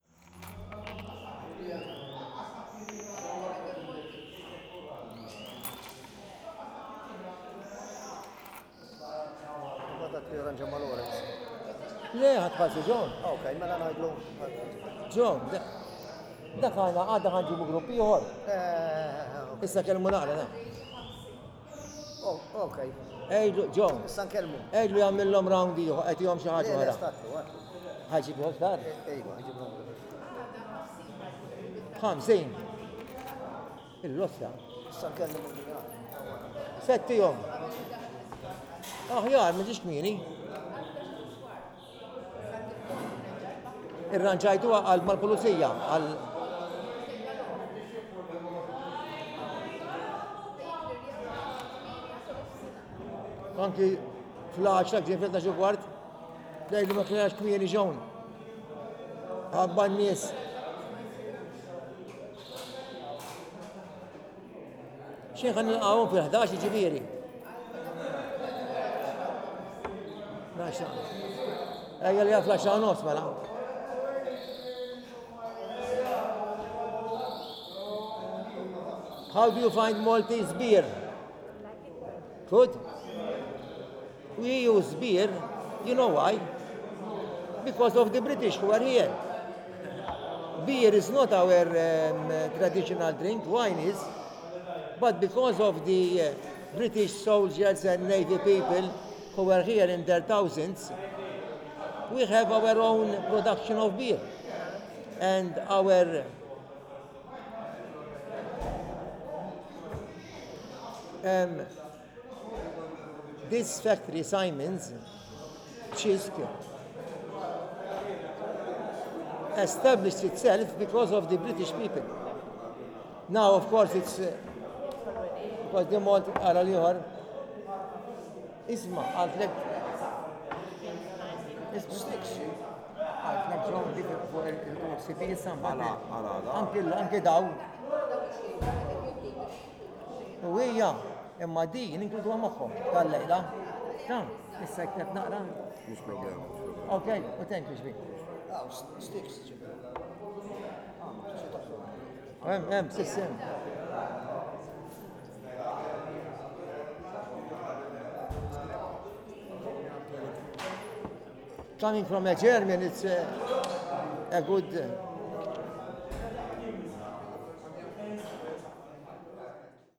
Il-Ħerba, Żejtun, Malta - Zejtun Band Club
continued, ambience in the club, where you can also hear a bird in a cage tweeting
1 April